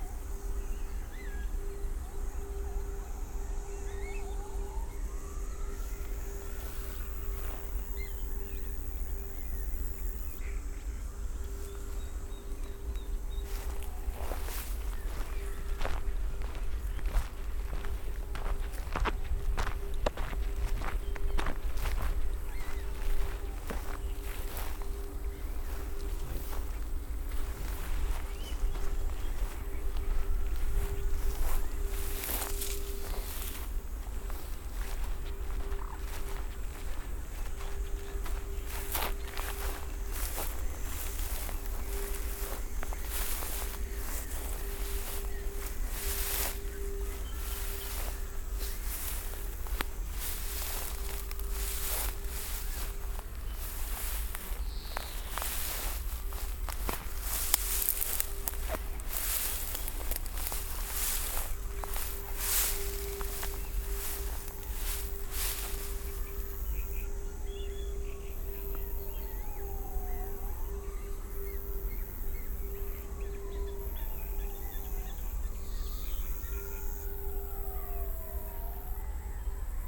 Văcărești swamp, bucharest, romania - walking
crazy.
2 x dpa 6060 mics (fixed on ears).
România, 2 July 2022, 15:35